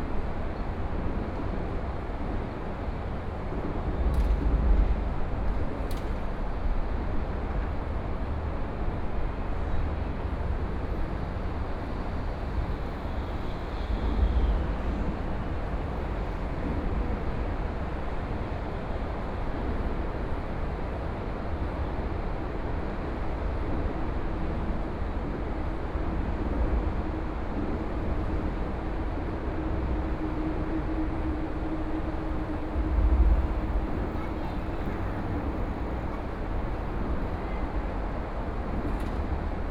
中山區圓山里, Taipei City - Highway
On the highway below, .Sunny afternoon
Please turn up the volume a little
Binaural recordings, Sony PCM D100 + Soundman OKM II